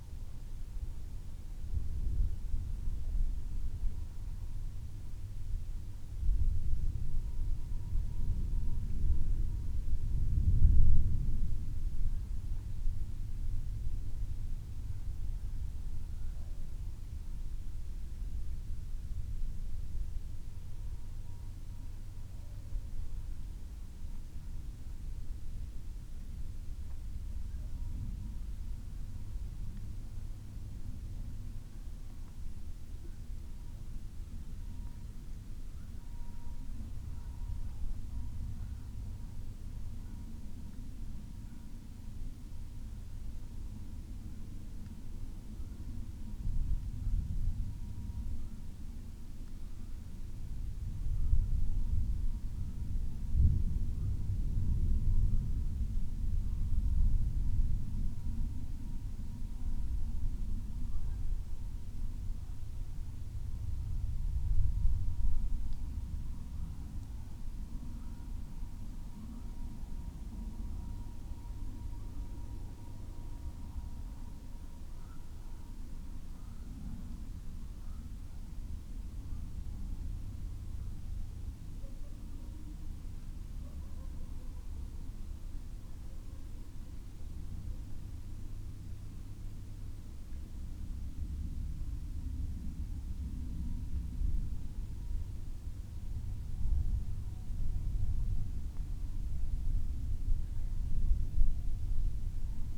moving away thunderstorm ... xlr SASS on tripod to Zoom H6 ... dogs ... ducks ... voices in the background ...